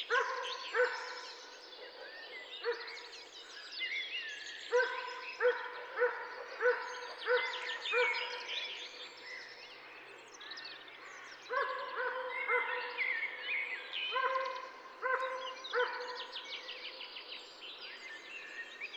Route de la Tuilerie, Massignieu-de-Rives, France - chien en laisse au loin et oiseaux de printemps.
chien en laisse au loin et oiseaux de printemps.
Tascam DAP-1 Micro Télingua, Samplitude 5.1
21 April 1998